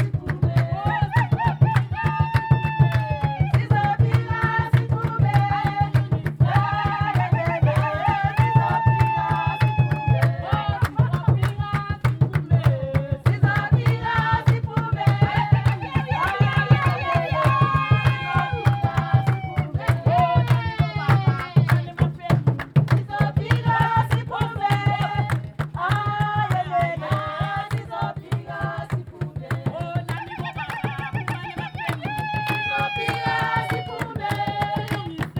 outside the Hall, Matshobana, Bulawayo, Zimbabwe - I'll always come back to the arts...
When the ambience indoors became a bit rich due to a youth group next-door playing music, we decided to continue outside (in the boiling midday sun…)…
Kalanga dance : “you can arrest me…but I’ll always come back to the arts!”
30 October, 11:25